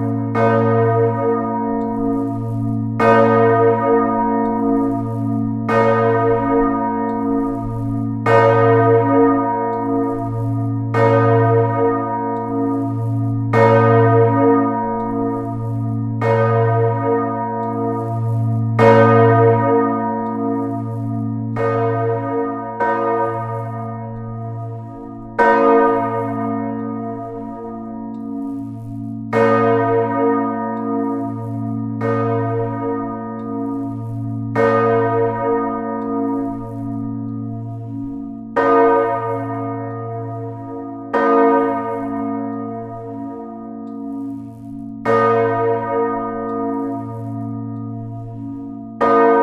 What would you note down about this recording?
aufnahme im glockenturm miitags, glockenläuten der dicken märch (glockennmame), beginn mit kettenantrieb der glocke, - soundmap nrw, project: social ambiences/ listen to the people - in & outdoor nearfield recordings